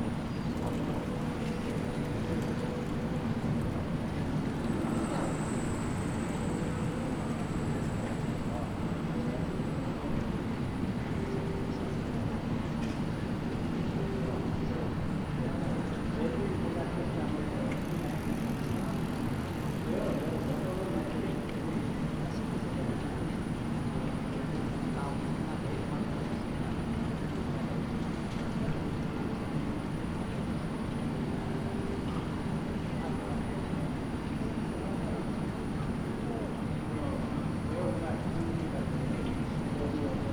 Rotuaarinaukio, Oulu, Finland - Slow day in Oulu
Ambiance in the centre of Oulu on the first proper summer weekend of 2020. Rather quiet as people spend their time elsewhere. Zoom h5 with default X/Y module.